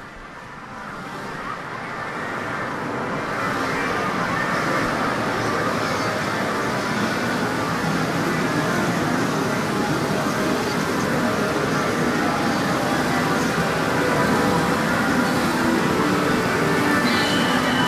pachinko parlor
Pachinko is a Japanese gaming device used for amusement and prizes.
Setagaya, Sakurashinmachi, ２丁目１０ 都道427号線